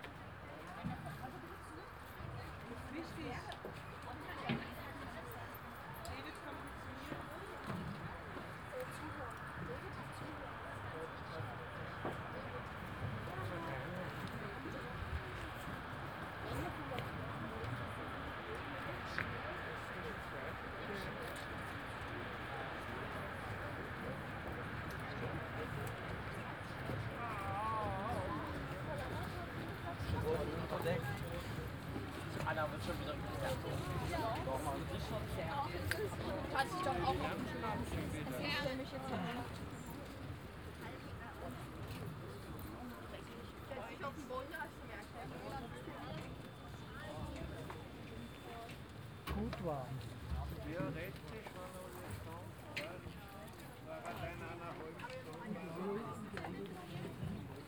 This ferry ideally operates without a motor, so it's pretty silent and has a good ecological footprint.
(Sony PCM D50, OKM2)
Rathen, Germany, 19 September 2018